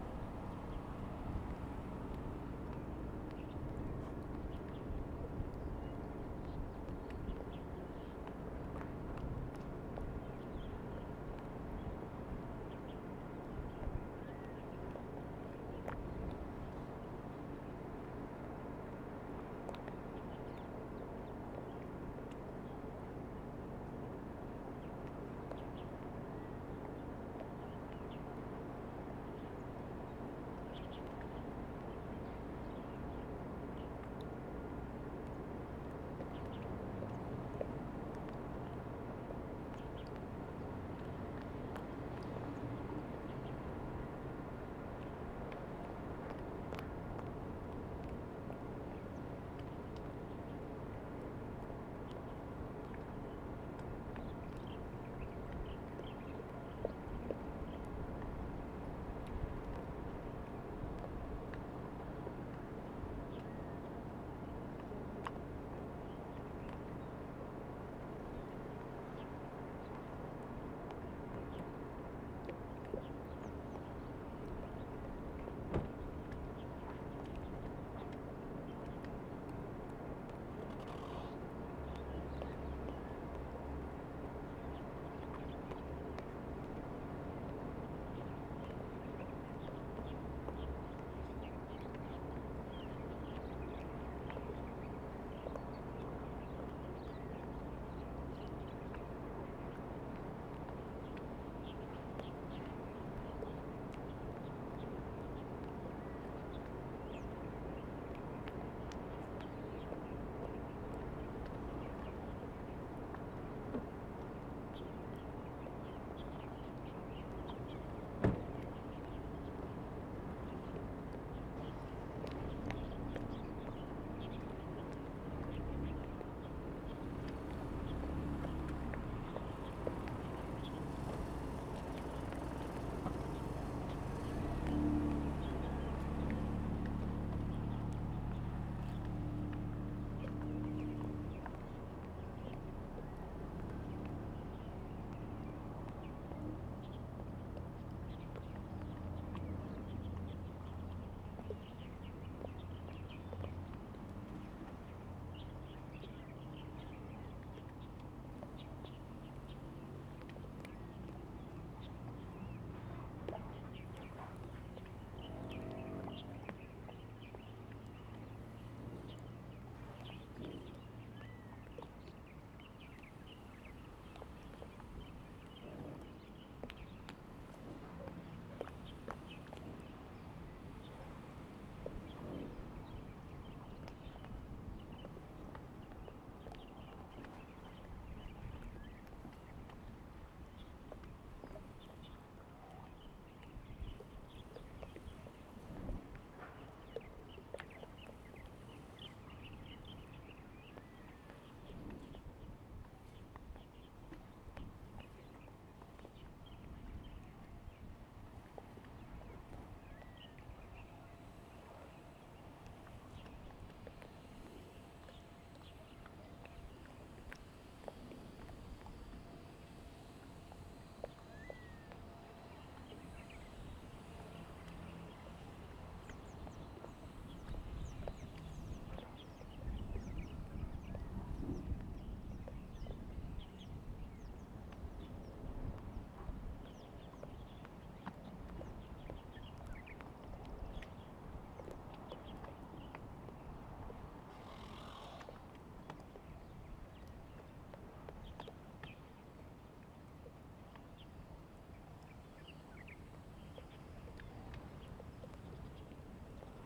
In a small fishing port, Next to the pier, The sound of the tide, Birds singing
Zoom H2n MS +XY